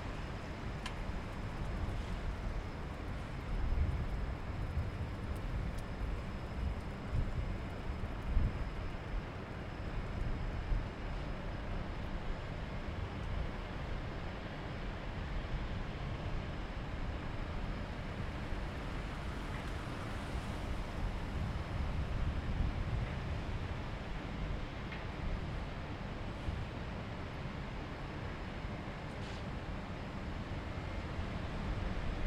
Coenhavenweg, Amsterdam, Nederland - Wasted Sound Bunge
Wasted sounds is a project where I am looking for sounds that won't be heard or that are considered as noise.
Noord-Holland, Nederland, 7 November 2019